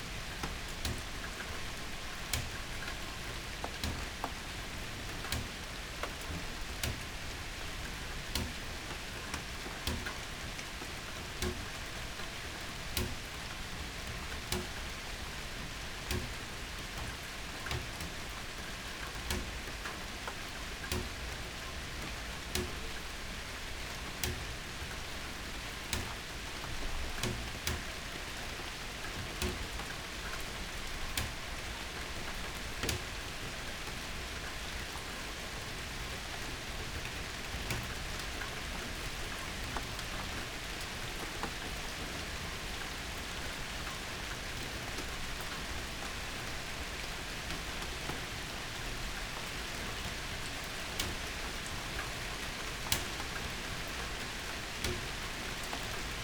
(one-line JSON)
{"title": "Berlin Bürknerstr., backyard window - spring rain", "date": "2019-05-31 21:00:00", "description": "nice spring rain in the backyard, drops on the garbage bins\n(Sony PCM D50)", "latitude": "52.49", "longitude": "13.42", "altitude": "45", "timezone": "Europe/Berlin"}